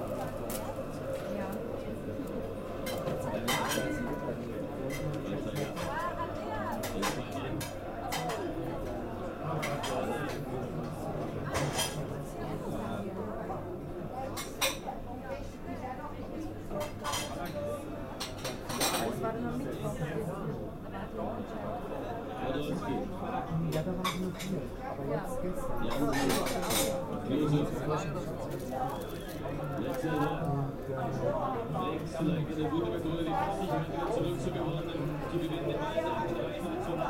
St. Gallen (CH), theatre canteen, soccer game
european soccer championship: germany vs. poland. recorded june 7, 2008. - project: "hasenbrot - a private sound diary"
Saint Gallen, Switzerland